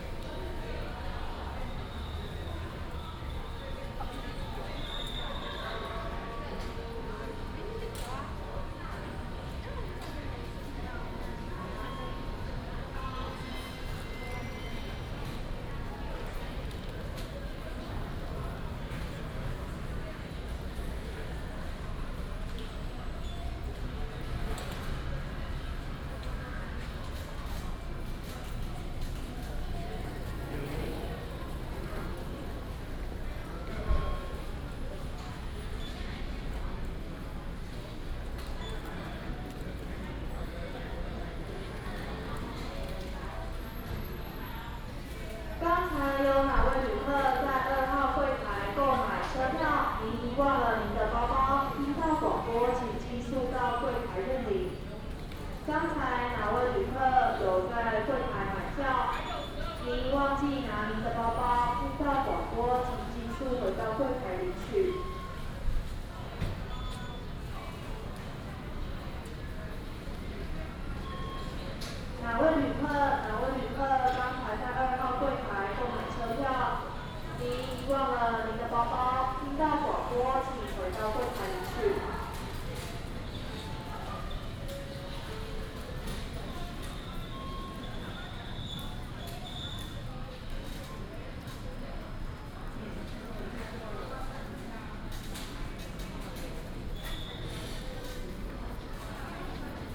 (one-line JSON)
{"title": "Hsinchu Station - In the station hall", "date": "2017-01-16 10:17:00", "description": "In the station hall, Traffic sound, Station Message Broadcast sound", "latitude": "24.80", "longitude": "120.97", "altitude": "28", "timezone": "GMT+1"}